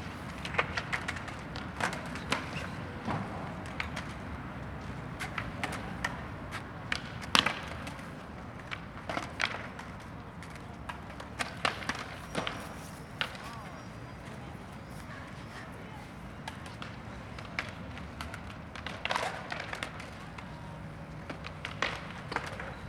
a bunch a skateboarders doing their tricks in the empty pool of the fountain.
Poznan, Poland, 2 March 2014, 15:00